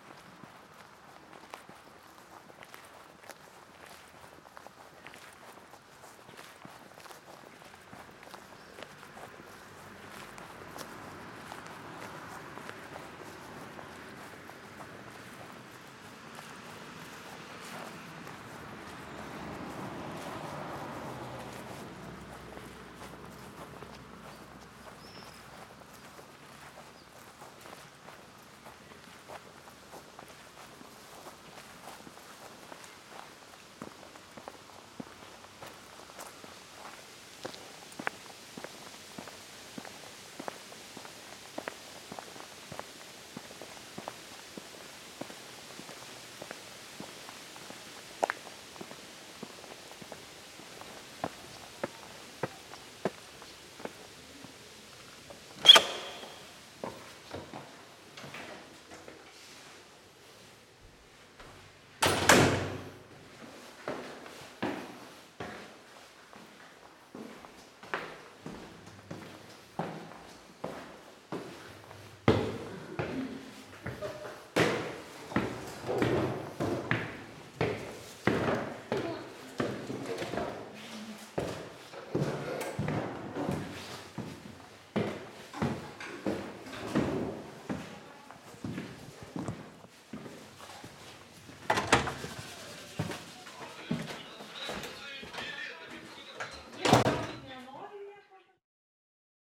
Kintai, Lithuania, a walk to art residence
Going through the forest and stadium from the beach to Kintai art residence